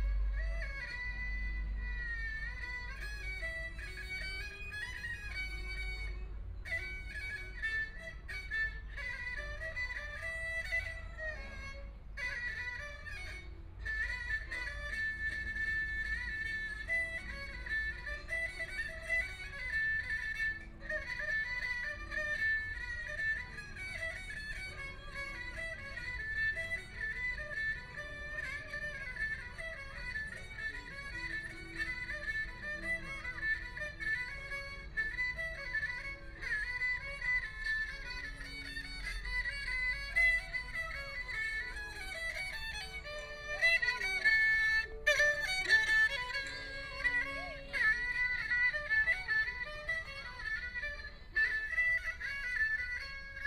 Yangpu Park, Shanghai - Pull the erhu
An old man, Pull the erhu, Binaural recording, Zoom H6+ Soundman OKM II